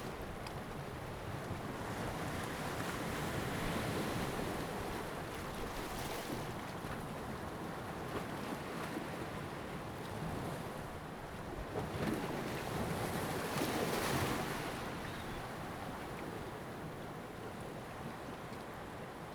sound of the waves
Zoom H2n MS +XY
Taitung County, Taiwan, 29 October